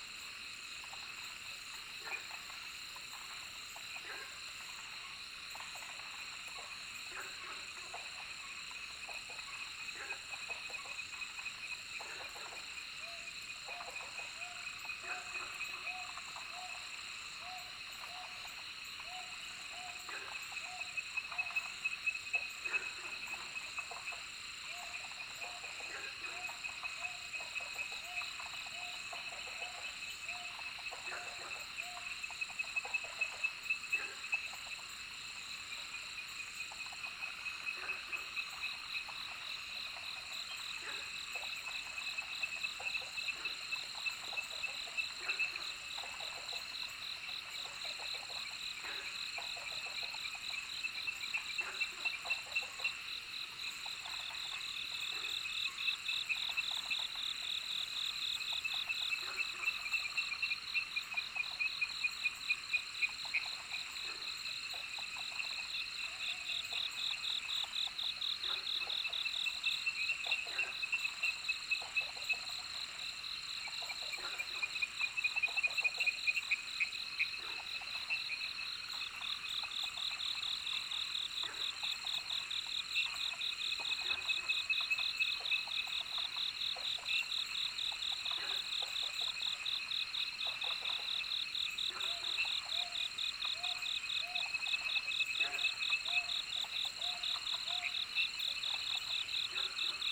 江山樂活, 埔里鎮桃米里 - Frogs chirping and Insects called
Frogs chirping, Insects called, Bird sounds, Dogs barking
Zoom H2n MS+XY
Nantou County, Puli Township, 華龍巷164號, 7 June